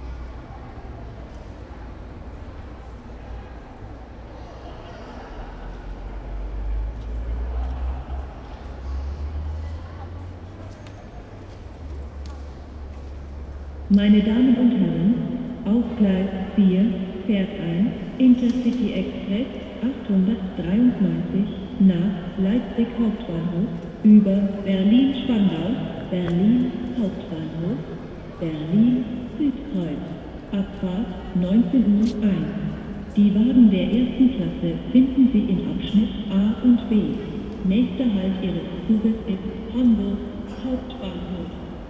hamburg dammtor, track - ICE train arriving, station ambiance
hamburg dammtor station, train to berlin arriving at track 4
Hamburg, Germany, 21 August, 19:00